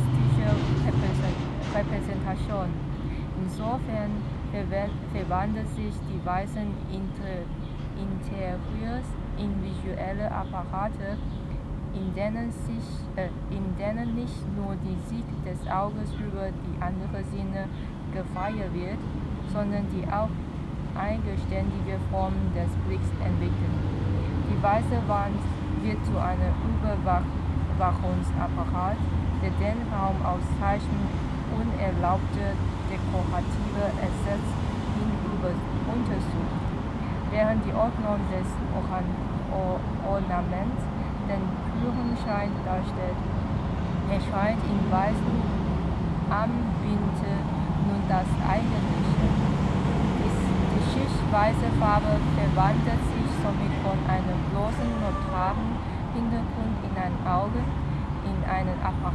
The reading group "Lesegruppedecolbln" reads texts dealing with colonialism and its consequences in public space. The places where the group reads are places of colonial heritage in Berlin. The text from the book "Myths, Masks and Themes" by Peggy Pieshe was read at the monument of Frederick I and Sophie Charlotte, who stands in colonial politics and the slave trade next to a 3-lane road.